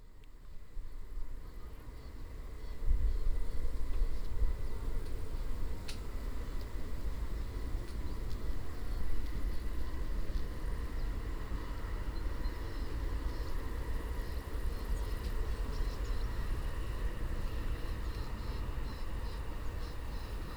stilte ? met de trein op de achtergond
Leiden, The Netherlands, 2 September 2011